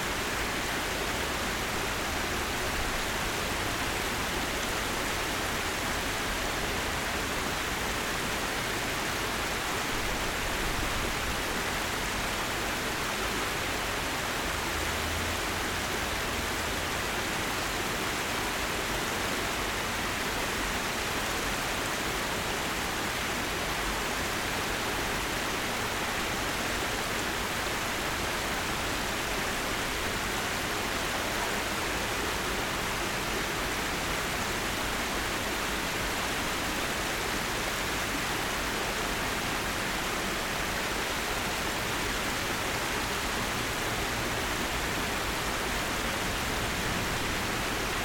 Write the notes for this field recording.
Sounds from the artificial waterfall at 601 Lex Ave - recorded at the sunken plaza level.